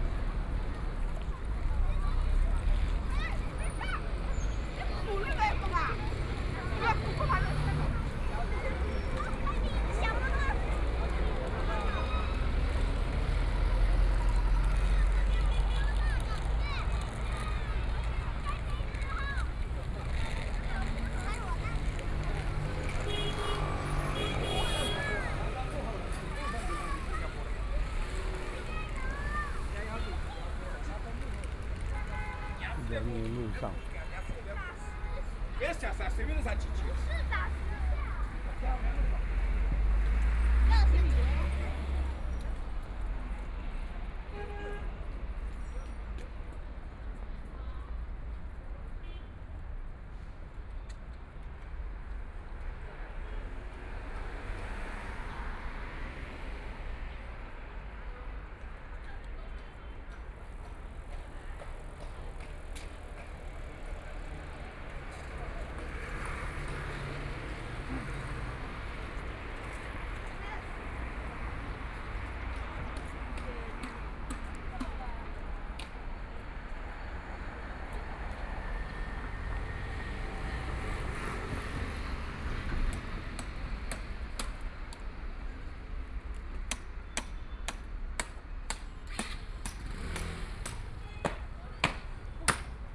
Renmin Road, Shanghai - street sound
Walk from the subway station near the old community near, Traffic Sound, Binaural recording, Zoom H6+ Soundman OKM II